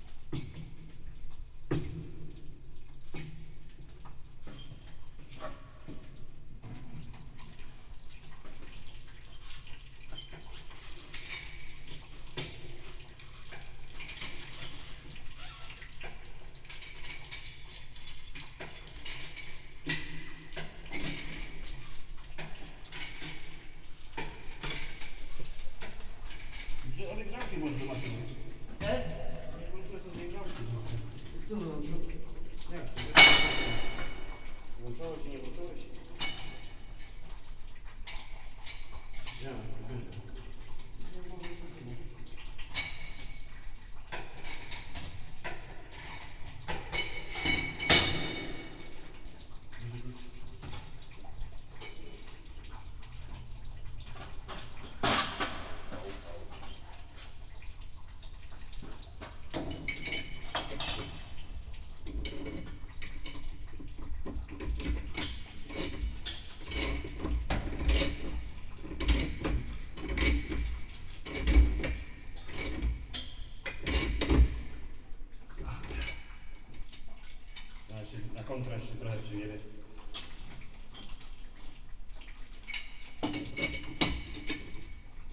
two maintenance workers fixing a broken pipe, discussing their work, one of them heard of hearing, not saying much, the other talking a bit gibberish and cursing